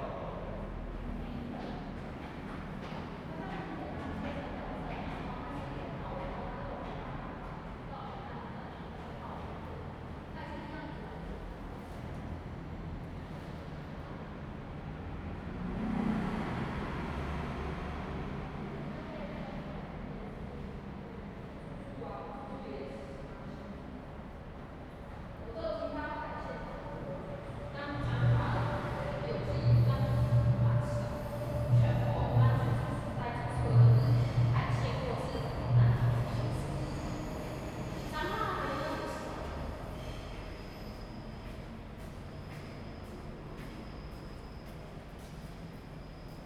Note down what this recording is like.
underground tunnel, The train runs through, Traffic sound, Zoom H2n MS+XY